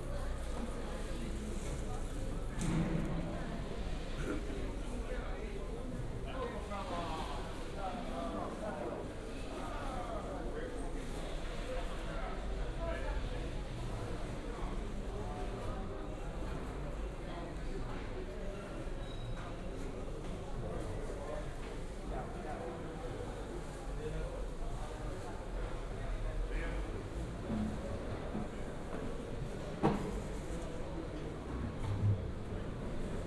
Funchal, Mercado dos Lavradores - Mercado dos Lavradores
(binaural) walking around worker's market in Funchal. it wasn't very busy at that time. vendors setting up their stands, laying out goods for sale. the fish are being clean out and cut in the other room.
Funchal, Portugal, 9 May 2015